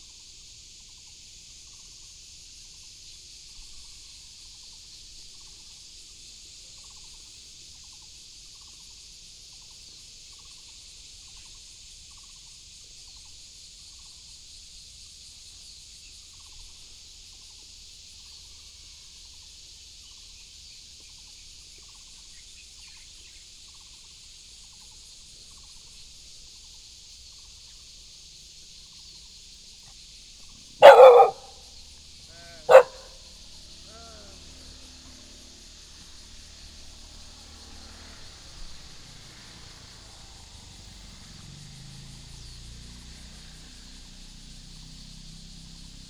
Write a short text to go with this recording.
Near high-speed railroads, traffic sound, birds sound, Cicada cry, Dog sounds